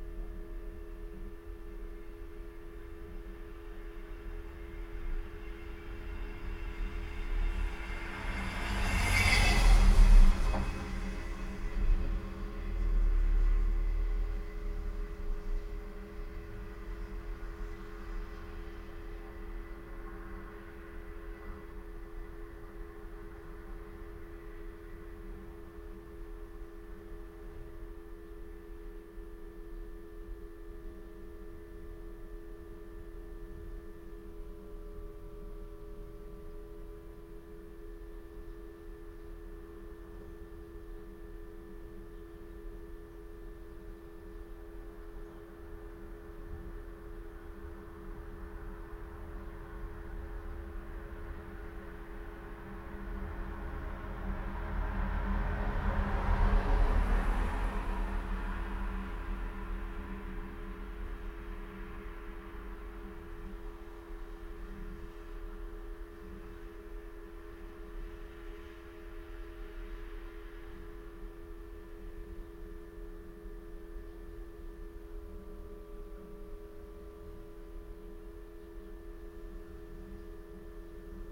inside the telephone box ... the kiosk is now defunct ... bought for a £1 ... houses a defibrillator ... that produces the constant low level electrical hum ... and a container for newspapers ... recorded with Olympus LS 14 integral mics ... passing traffic etc ...
England, United Kingdom, 1 January 2020